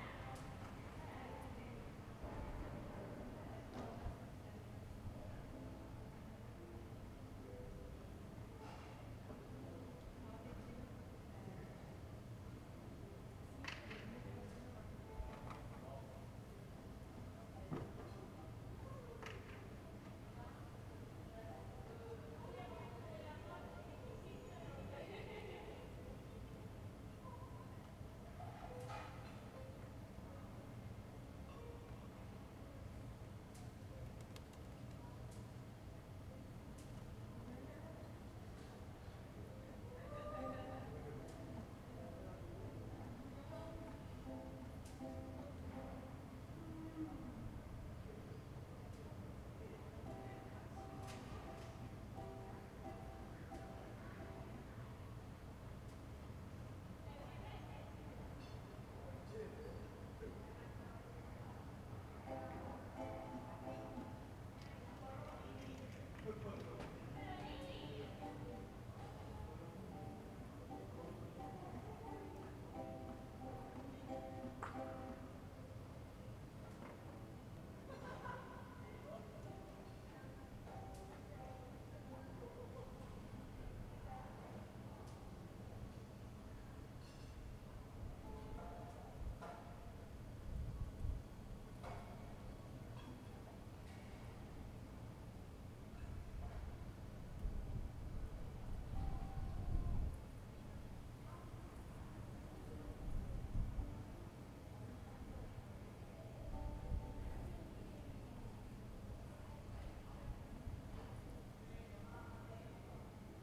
"Sunday afternoon with banjo, lol, bird and dog in the time of COVID19" Soundscape
Chapter LXIX of Ascolto il tuo cuore, città. I listen to your heart, city
Sunday May 17th, 2020. Fixed position on an internal terrace at San Salvario district Turin, sixty-eight days after (but day fourteen of phase II) emergency disposition due to the epidemic of COVID19.
Start at 3:29 p.m. end at 4:15 p.m. duration of recording 45’47”